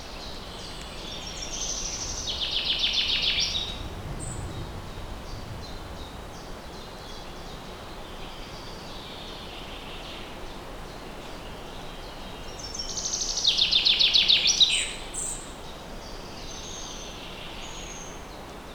Vipava, Slovenia - Mali vipavski ledenik
Birds and wind in forestLom Uši Pro, MixPre II.
Slovenija